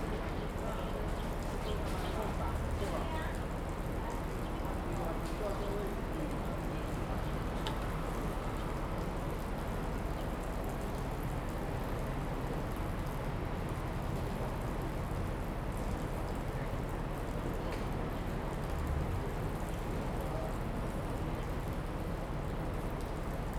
羅山村, Fuli Township - in the Agricultural Market Center
in the Agricultural Market Center, Many tourists, Traffic Sound, Birds singing, Very hot weather
Zoom H2n MS+ XY
Hualien County, Fuli Township, 7 September 2014